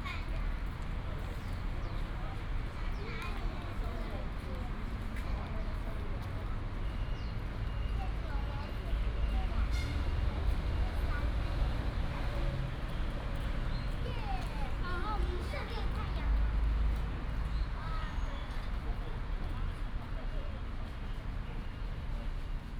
新瓦屋客家文化保存區, Zhubei City - In the small square
In the small square, Traffic sound, sound of the birds, Child, Footsteps